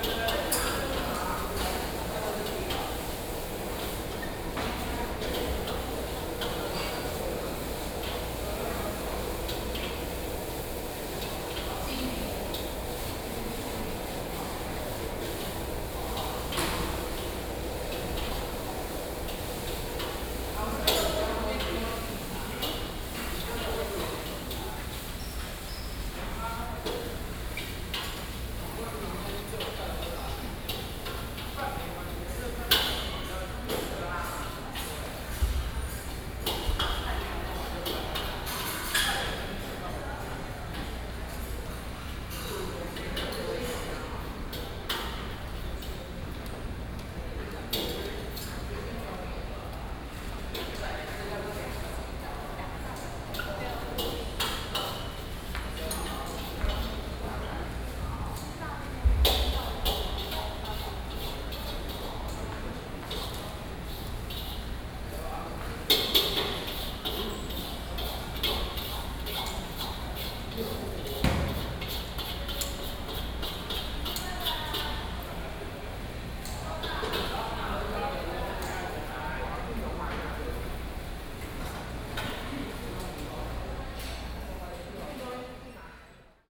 Ln., Aiguo E. Rd., Zhongzheng Dist., Taipei City - Cooking stall
Collection of residential floor plaza, Cooking stall, Sony PCM D50 + Soundman OKM II
中正區 (Zhongzheng), 台北市 (Taipei City), 中華民國, 4 June 2012, ~18:00